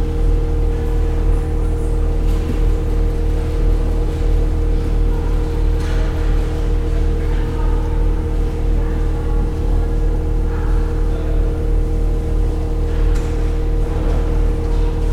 Vilnius Bus Station, Geležinkelio g., Vilnius, Lithuania - Bus station waiting hall, near an air conditioner unit
A composite recording. Reverberating waiting hall is captured with stereo microphones, and nearby air conditioner hum is captured with dual contact microphones. Recorded with ZOOM H5.
Vilniaus miesto savivaldybė, Vilniaus apskritis, Lietuva